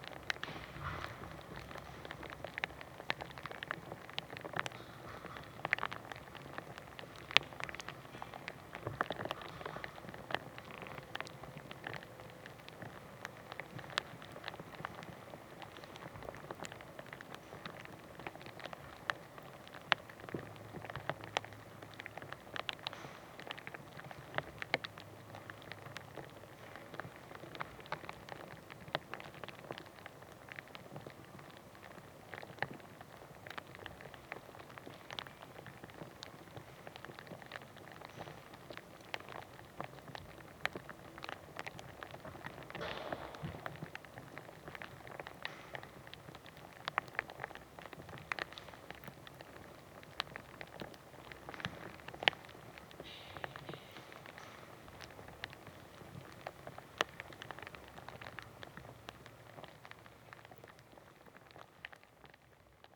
Spathyphyllum, Riga Botanical Gardensl
Plant recording made for White Night, Riga 2011.